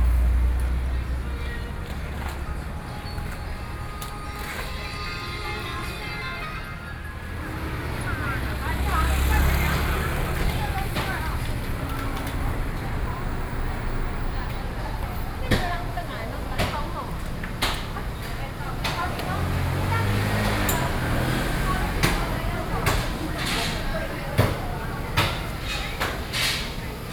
walking in the Traditional Market, Waiting for the train, Sony PCM D50 + Soundman OKM II
台北市 (Taipei City), 中華民國